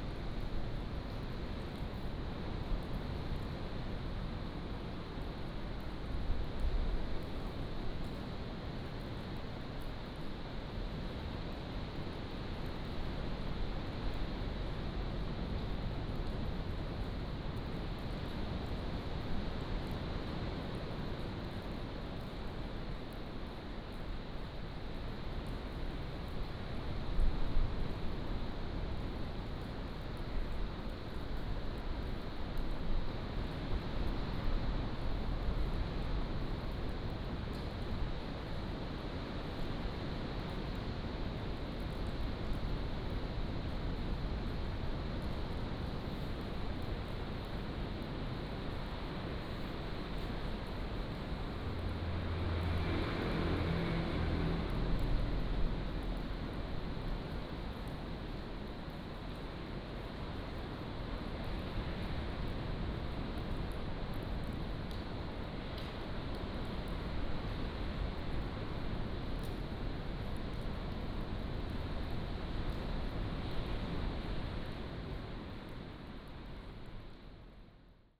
朗島村, Ponso no Tao - Inside the cave
Inside the cave, Sound of the waves, Aboriginal rally venue
Taitung County, Taiwan, 29 October 2014, 10:03